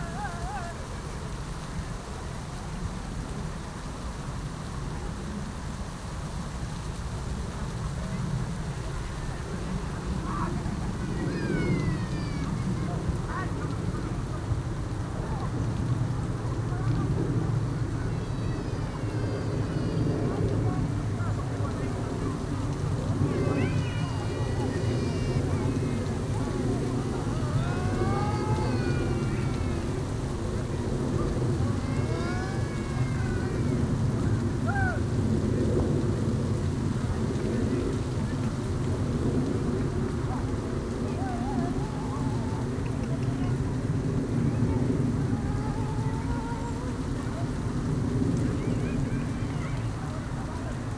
Tempelhofer Feld, Berlin, Deutschland - wind, field ambience
place revisited while quite some activity of people is audible
(Sony PCM D50, DPA4060)
8 November 2014, 17:05